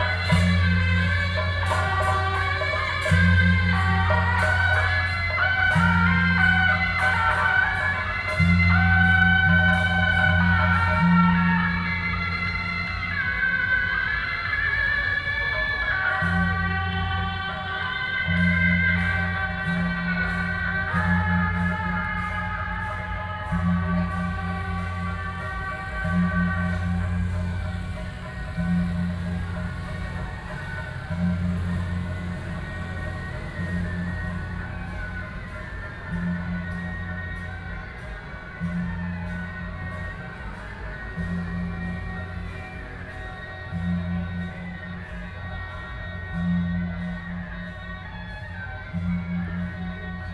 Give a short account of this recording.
temple fair, Firecrackers sound